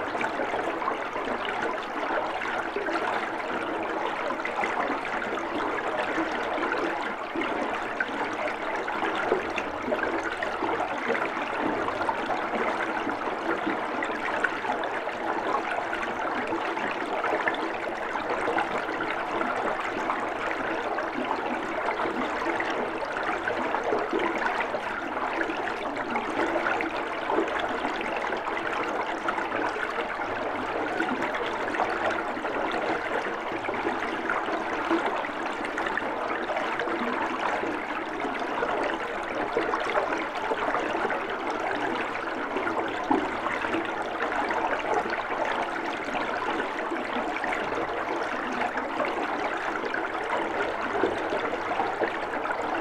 Differdange, Luxembourg - Water flowing
Water flowing in a tube, in an old entrance of the mine. This water is pushed up by a pump and fill an enormous tank. This water is intended to cool down metal in the Differdange steelworks.